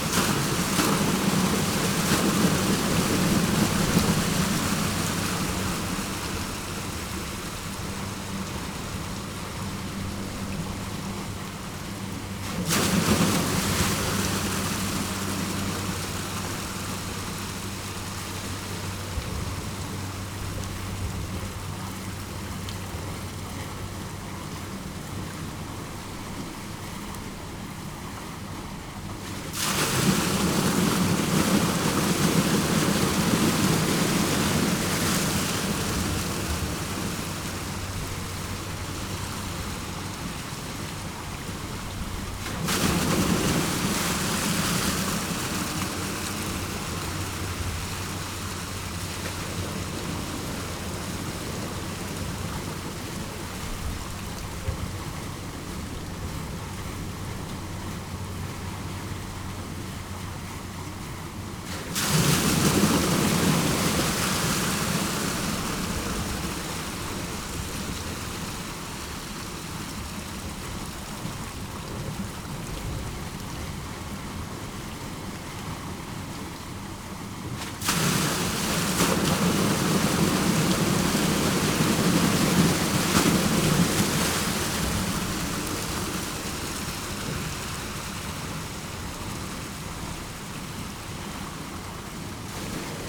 Litvínov, Czech Republic - Kopisty (the outside of the pipes)
Most Basin, North Bohemia
These sounds were recorded in the area of the former village of Kopisty. Kopisty was demolished (in the 70's) to make way for the expanding mines and petrochemical industries. There are many kilometers of pipes in the landscape. There is black liquid tar flowing from these pipes.